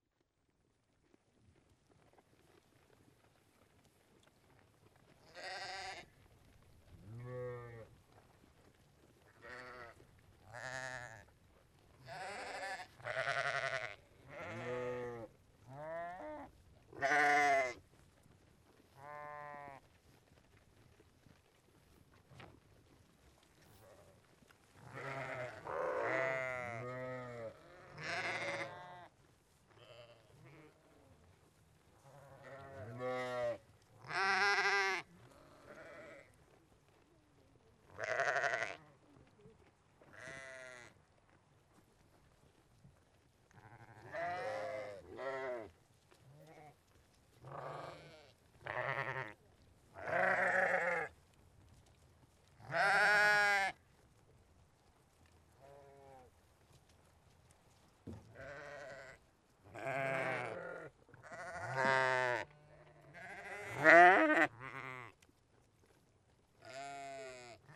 Kentmere, Cumbria, UK - Amy Black's Rough Fell sheep
This is a recording of Amy Black's Rough Fell sheep. These are just the yowes; the recording was made in Winter, when the tups (rams) are separated from the yowes, (ewes) to make sure that lambing doesn't go on late into the summer. These Rough Fell sheep are a prize-winning flock and so very friendly and used to having a lot of human handling. In the recording you can faintly hear Margaret Black talking to the sheep; she is Amy's mother. Margaret's mother before her (Clara Black) was also a shepherd. She is retired now, (as much as any Cumbrian farmer is ever "retired") but still a massive fan of the Rough Fell breed. We took hay up to these sheep on a quad bike, which is why they came up so close to us, and which is what you can hear them all munching.
10 January 2012